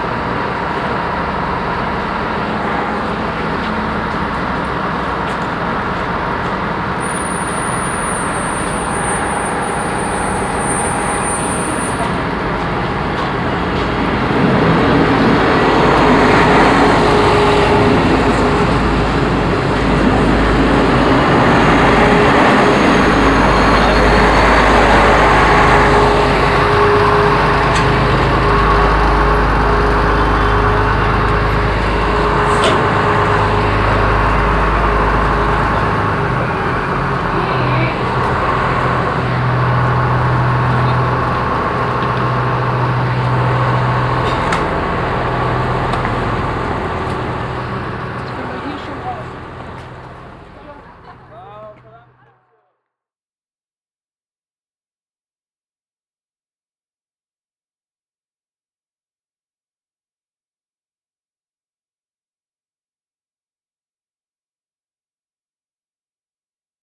Overath, Deutschland - overath, station, trains
At the station. The sounds of a train arriving and depart and another train arriving with people bailing out.
soundmap nrw - social ambiences and topographic field recordings
Overath, Germany, 22 April 2012, 13:02